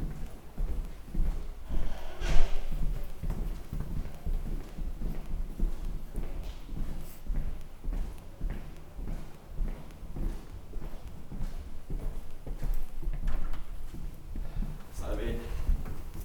Schwäbisch Gmünd, Deutschland - walk
A short audio impression of a walk through the containers of the HfG.
Schwäbisch Gmünd, Germany